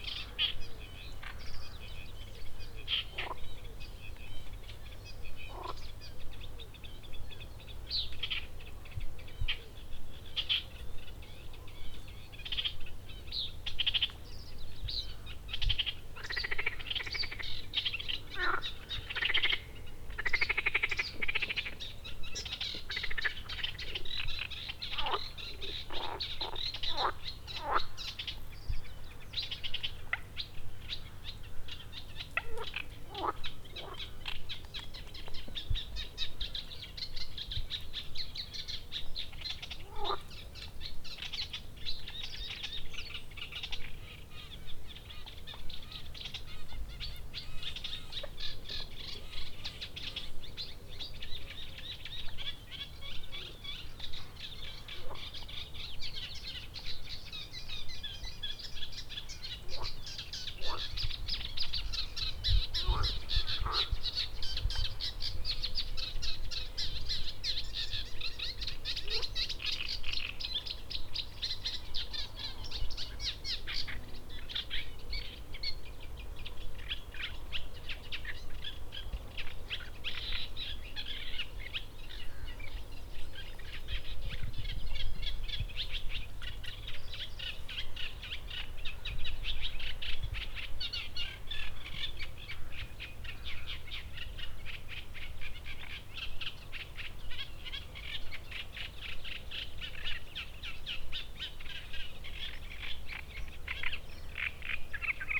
frogs and birds recorded early morning